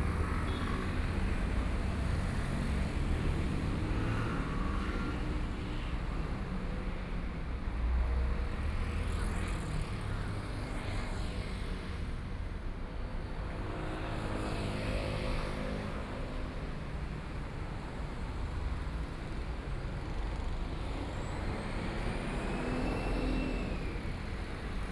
中山區永安里, Taipei city - soundwalk
Walking on the road, Then enter the restaurant, Traffic Sound, Binaural recordings, Zoom H4n+ Soundman OKM II